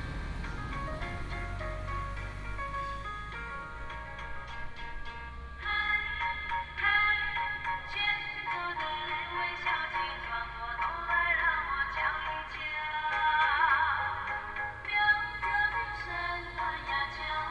牡丹鄉199縣道, Pingtung County - At the intersection
At the intersection, Vendor carts selling ingredients, Traffic sound
Pingtung County, Mudan Township, 199縣道199號, 2 April